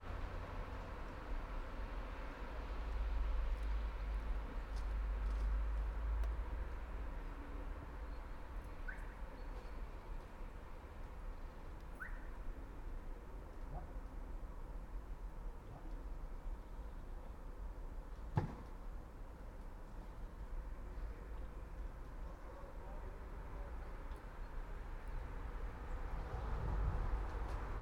all the mornings of the ... - jan 20 2013 sun